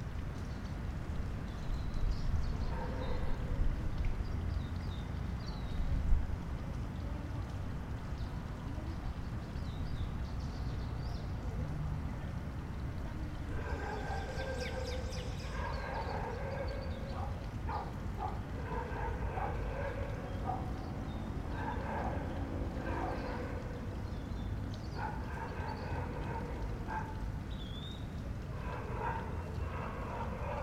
Dg. 2a Sur, Bogotá, Colombia - Conjunto residencial Banderas
This place is a residential complex located in the town of Kennedy, it is a stratum 3 neighborhood. This place has a calm atmosphere, with many birds around, a water fountain, cars and dogs barking and from time to time people talking.
This audio was recorded at 4 in the afternoon, using the shure vp88 microphone and a Focusrite 2i2 interface.